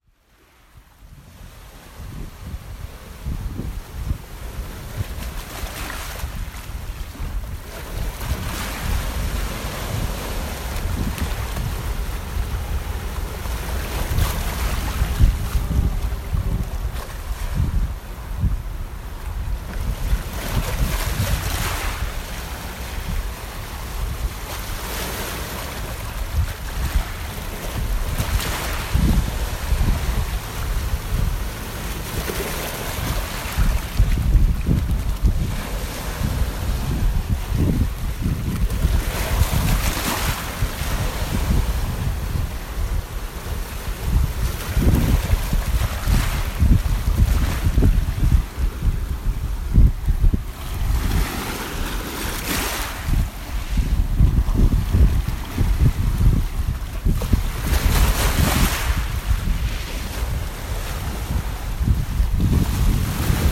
Leof. Kon/nou Karamanli, Voula, Greece - Voula beach on a windy day
Beach recorded for a course project. The audio is unedited except for fade in and fade out.
Αποκεντρωμένη Διοίκηση Αττικής, Ελλάς, 2 November 2021, 16:00